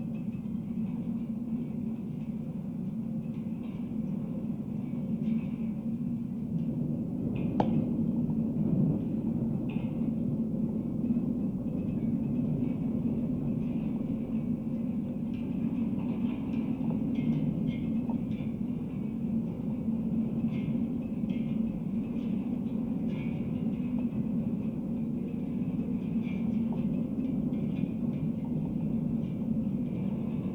Isle of Islay, UK - wind in the wires
A pair of contact mics (to Olympus LS 14) secured to the fence line of Gruinart Reserve on a late May evening.